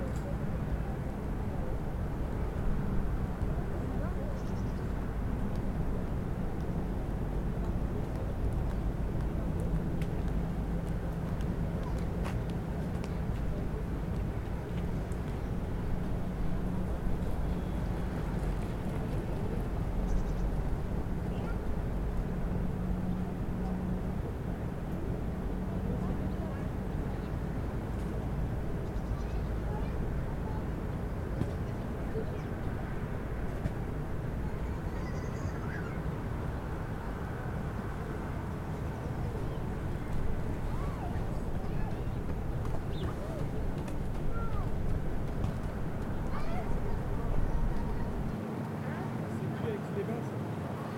Bord du lac d'Aix les Bains, Tresserve, France - Plage du Lido
Le temps est gris, au bord du chemin lacustre réservé aux piétons et cyclistes près de la plage du Lido, bruit de la ventilation du restaurant bar, quelques baigneurs, les passants et la circulation sur la route voisine.